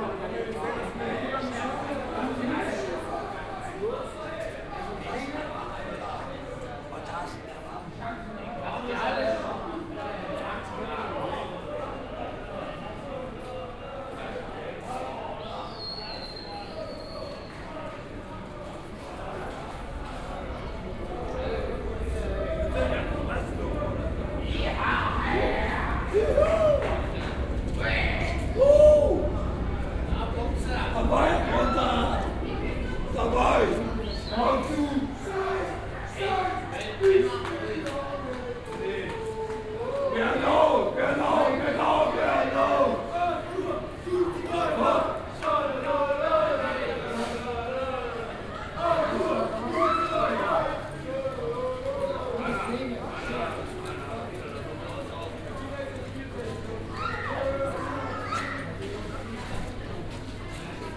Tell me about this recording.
bahnhof / station alexanderplatz, saturday night ambience, rude atmosphere, soccer fans shouting and singing